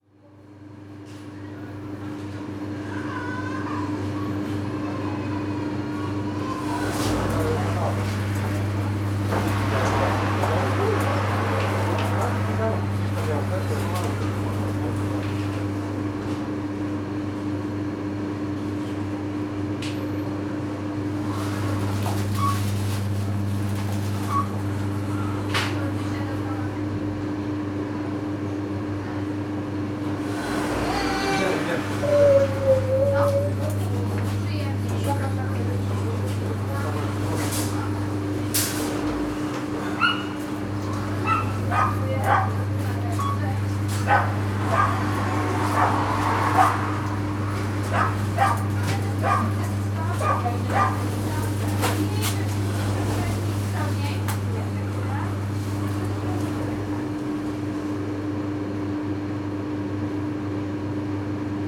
Piatkowo, Chata Polska convenient store - sliding door entrance filter
standing between two sets of sliding door under a big air conditioner. when the doors were closed, they tightly sealed the sounds from inside the store and from the street on the other side. you can only hear the isolated drone of the air conditioner. as soon as the doors slide open all kind of sounds gush into the small space. crying children, customers, cash registers, clutter of the shopping carts, barking dog, passing cars, steps.
Poznan, Poland, 25 May 2014, ~09:00